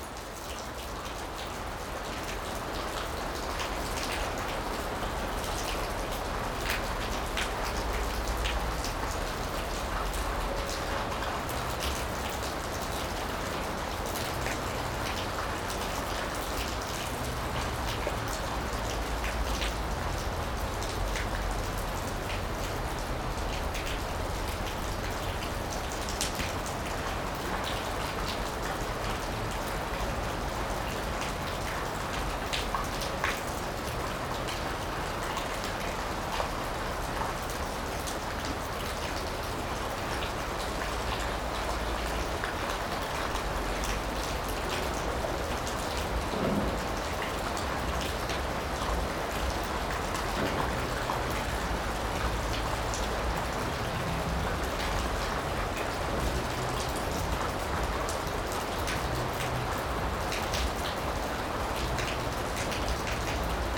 Yville-sur-Seine, France - Rainy day
In a pasture, it's raining a lot. All is wet and we are waiting in a barn.
17 September 2016